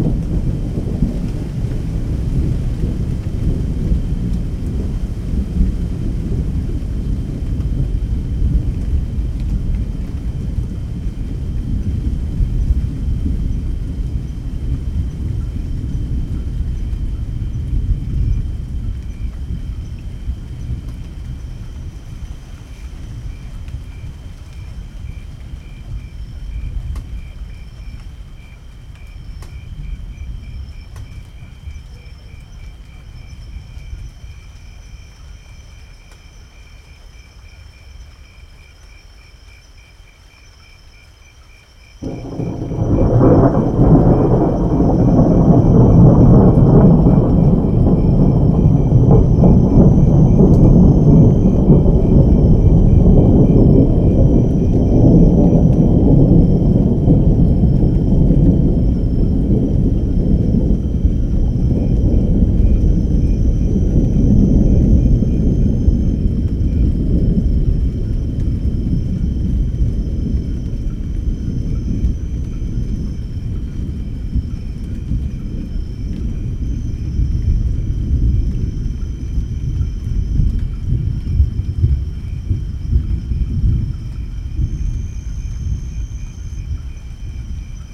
During a summer night in the countryside of Brazil, in the state of Minas Gerais... somme light rain and big thunders.
Recorded by an ORTF setup Schoeps CCM4x2
On a Sound Devices 633
Recorded on 24th of December of 2018
GPS: -20,11125573432824 / -43,7287439666502
Sound Ref: BR-181224T01